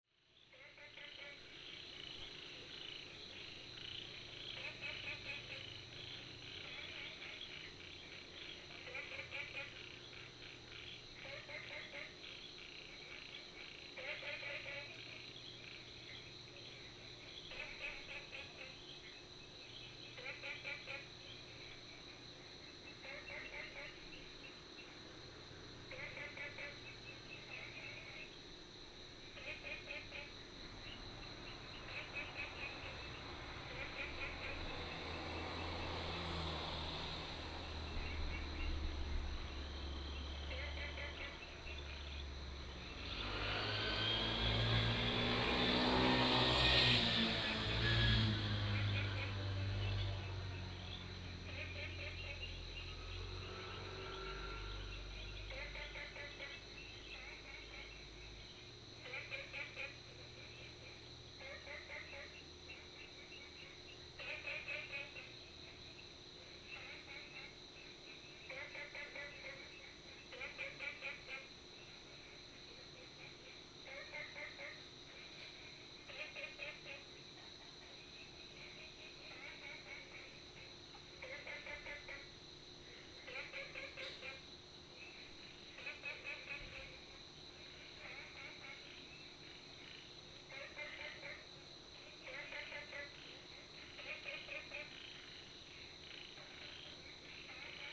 Frogs sound
Binaural recordings
Sony PCM D100+ Soundman OKM II